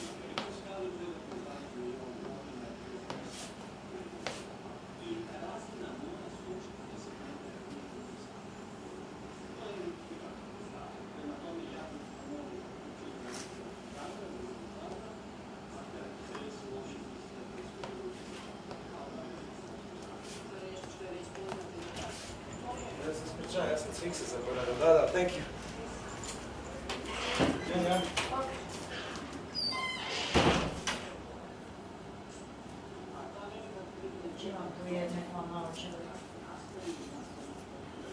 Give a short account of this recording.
barber shop, recorded during EBU sound workshop